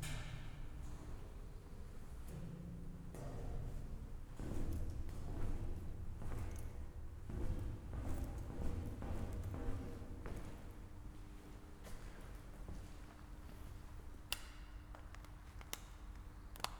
former Stasi hospital, Hobrechtsfelder Chaussee, Berlin-Buch, Deutschland - monitoring & power station, walk
strolling around in an abandoned power station of the former GDR goverment and Stasi hospital. It was a bit spooky to find one voltmeter working, showing full 230V, among dozens broken ones. Police siren suddenly, so I rather stopped recording and went invisible...
(Sony PCM D50, DPA4060)